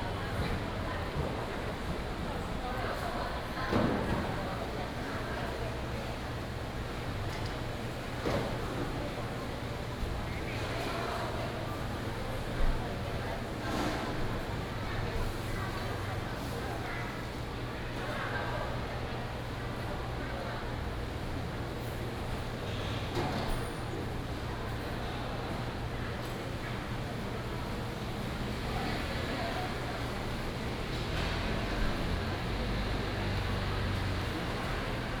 in the Dusk Market, Traffic sound, The vendors are sorting out, Binaural recordings, Sony PCM D100+ Soundman OKM II
Taichung City, Taiwan, 9 October